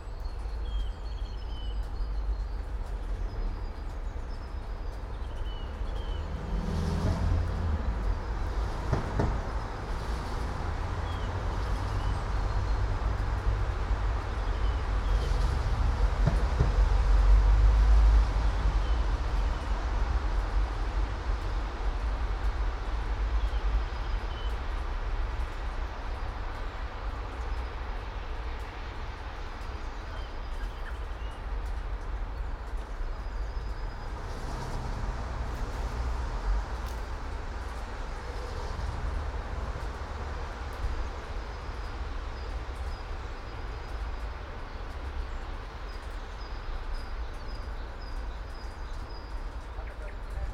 all the mornings of the ... - feb 28 2013 thu